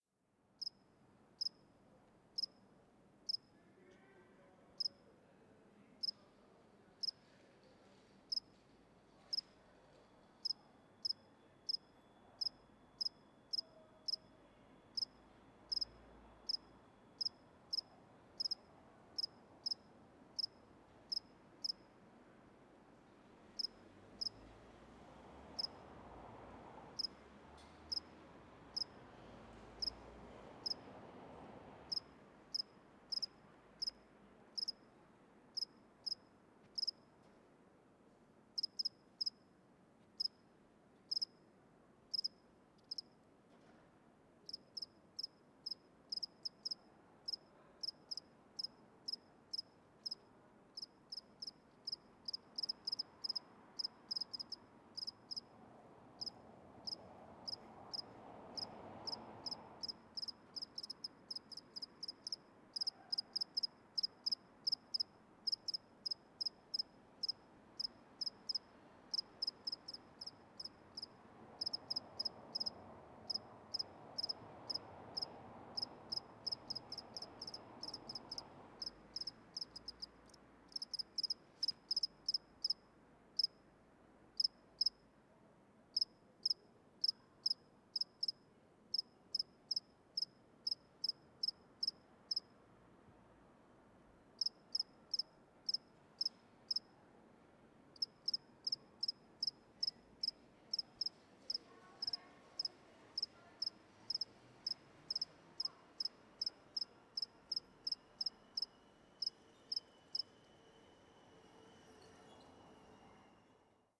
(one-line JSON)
{"title": "köln, genter str. - grille / cricket", "date": "2010-06-29 23:25:00", "description": "lonely cricket in ivy bush. warm summer night.", "latitude": "50.94", "longitude": "6.94", "altitude": "54", "timezone": "Europe/Berlin"}